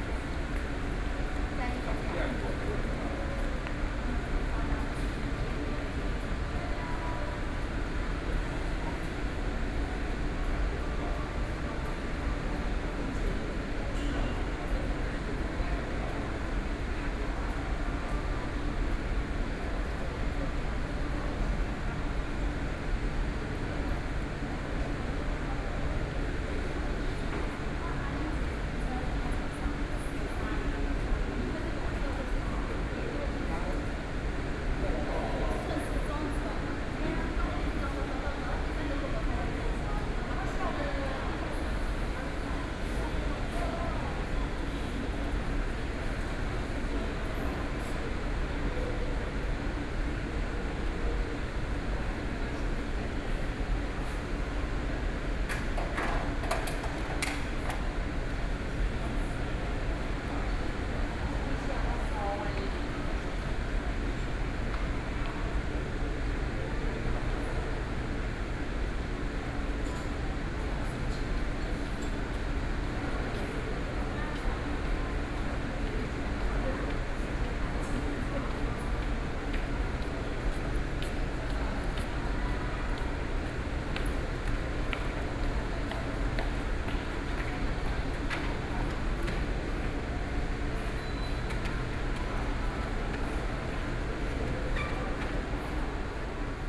New Taipei City Government, Taiwan - Wedding restaurant entrance
Wedding restaurant entrance, Binaural recordings, Sony Pcm d50+ Soundman OKM II
Banqiao District, New Taipei City, Taiwan, October 2013